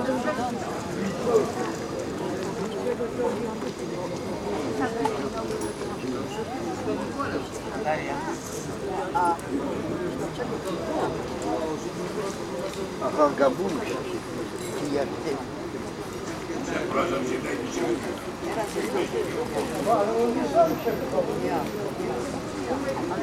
{"title": "Bytom, Poland - Chat and trade off the market", "date": "2015-08-10 11:16:00", "description": "People hanging around, chatting and trading in a no-vending zone. Binaural recording.", "latitude": "50.35", "longitude": "18.92", "altitude": "276", "timezone": "Europe/Warsaw"}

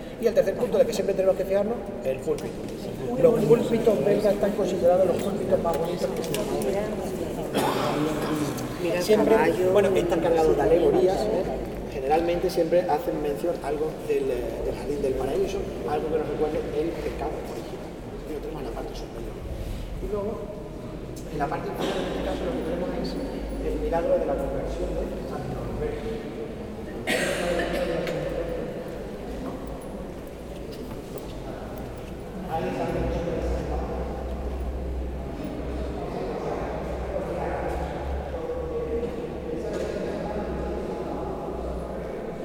{"title": "Leuven, Belgique - Leuven church", "date": "2018-10-13 11:45:00", "description": "Tourist guidance in spanish, inside the Leuven church.", "latitude": "50.88", "longitude": "4.70", "altitude": "39", "timezone": "Europe/Brussels"}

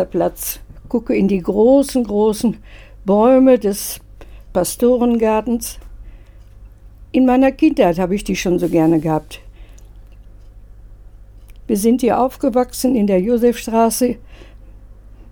{"title": "Josef Str, Hamm, Germany - Mein Fensterplatz...", "date": "2014-08-17 18:35:00", "description": "Irmgard Fatheuer sits with us at her favorite window place overlooking the huge trees in the garden behind the church. Irmgard was born in this house in 1926 and has lived here ever since. Listening to the birds and the wind in the trees, she tells us about the sounds she can still hear in her memory, like her father working in the bakery downstairs… One sound features strongly, and comes in live… (it’s the traditional call for the prayer called “Angulus” in the Catholic Church; it rings at 7am, 12 noon and 7 pm)\nWir sitzen mit Irmgard Fatheuer an ihrem Fensterplatz und blicken in die grossen Bäume des Kirchgartens. Irmgard ist 1926 in diesem Haus geboren… Geräusche aus der Erinnerung mischen sich ins Jetzt. Es gibt unendlich viel zu erzählen…\nrecordings and more info:", "latitude": "51.67", "longitude": "7.80", "altitude": "64", "timezone": "Europe/Berlin"}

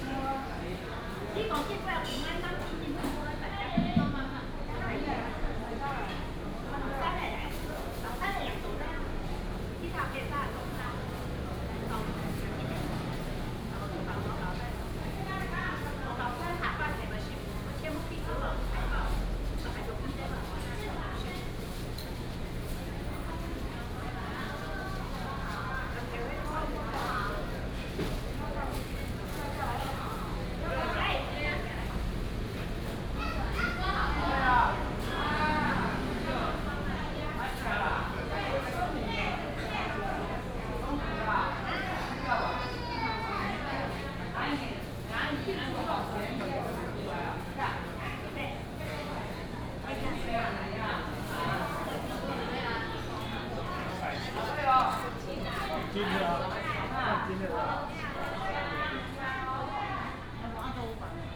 {"title": "南庄公有零售市場, Miaoli County - In the public retail market", "date": "2017-09-15 09:03:00", "description": "In the public retail market, traffic sound, Traditional market, Binaural recordings, Sony PCM D100+ Soundman OKM II", "latitude": "24.60", "longitude": "121.00", "altitude": "221", "timezone": "Asia/Taipei"}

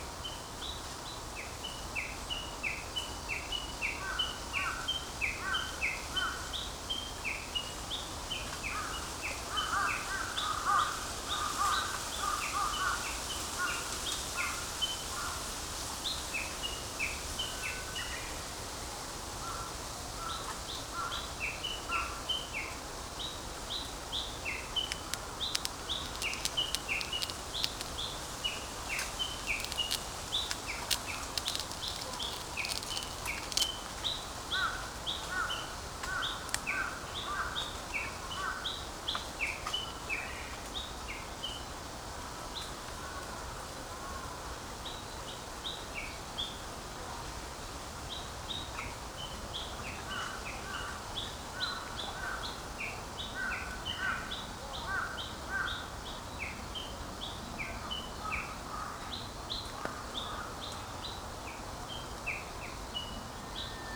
{"title": "Minamizakura, Yasu City, Shiga Prefecture, Japan - Japanese bush warbler, pheasant, and crows", "date": "2015-04-19 14:26:00", "description": "Recorded on a Sunday afternoon in a small bamboo grove along Yasugawa (river) with a Sony PCM-M10 recorder. Processed with Audacity on Fedora Linux: trimmed length to 10 minutes, applied high-pass filter (6dB/octave at 1000Hz), and normalized.", "latitude": "35.09", "longitude": "136.00", "altitude": "92", "timezone": "Asia/Tokyo"}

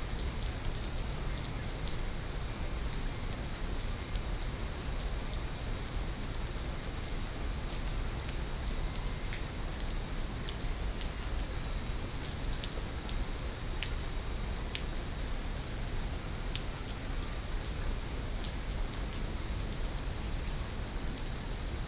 {"title": "松菸一號倉庫, Taipei City - Rain and Cicada sounds", "date": "2016-09-09 17:44:00", "description": "Rainy Day, Thunder, Cicada sounds", "latitude": "25.04", "longitude": "121.56", "altitude": "16", "timezone": "Asia/Taipei"}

